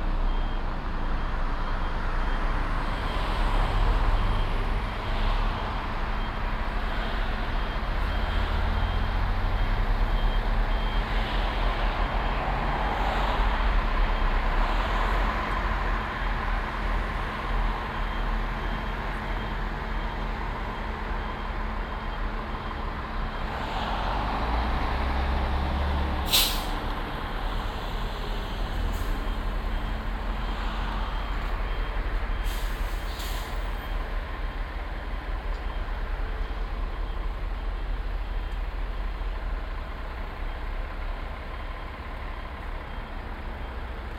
Olof-Palme-Damm, Kiel, Deutschland - Traffic and road works
Traffic on and below a bridge, one lane below the bridge is closed because of road works, trucks delivering asphalt waiting for discharging.
Binaural recording, Zoom F4 recorder, Soundman OKM II Klassik microphone
Kiel, Germany, 4 September 2017, ~19:00